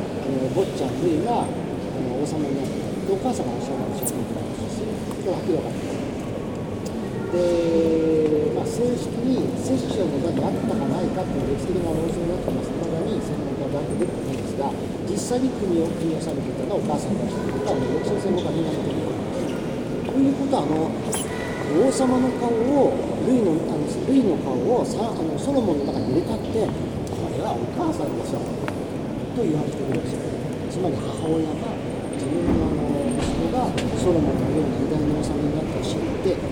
A quiet evening in the Chartres cathedral. Guided tour of Japanese tourists, speaking smoothly, and other tourists looking to the stained glass.
Chartres, France, 31 December, 17:30